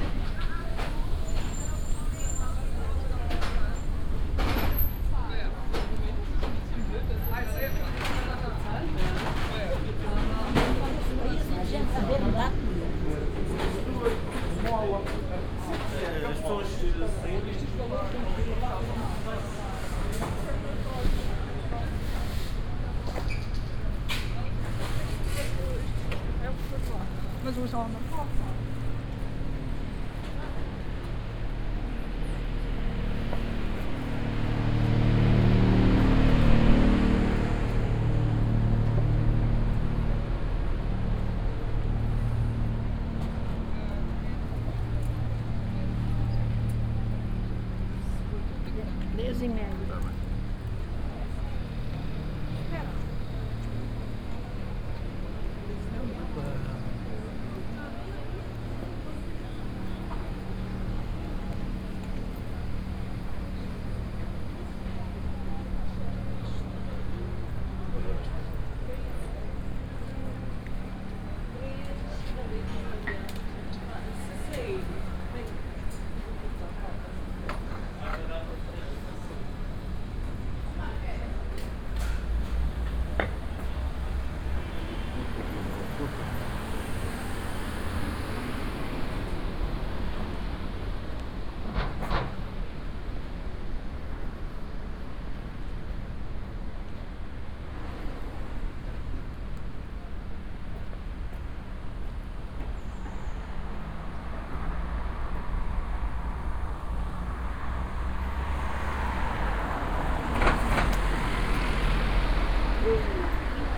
Ribeira Brava, back street in downtown - around the block
(binaural) walking around the backstreets in downtown of Riberia Brava. Sounds comming from different shops, cafes, businesses, radios and workshops.